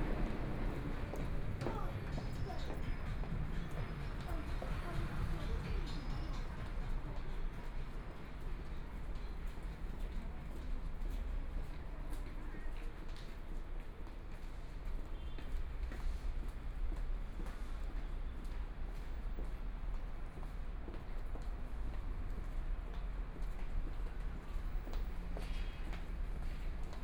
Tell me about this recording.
Walking on the road, Various shops voices, （Nanjing E. Rd., Zhongshan Dist.）from Songjiang Rd.to Jianguo N. Rd., Traffic Sound, Binaural recordings, Zoom H4n + Soundman OKM II